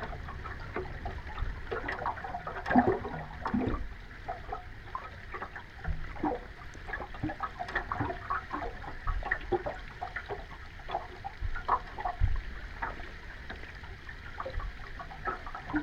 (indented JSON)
{
  "title": "Maneiciai, Lithuania, underwater listening",
  "date": "2021-04-09 14:30:00",
  "description": "Stormy day. Hydrophone in the water near some water pipe.",
  "latitude": "55.61",
  "longitude": "25.73",
  "altitude": "141",
  "timezone": "Europe/Vilnius"
}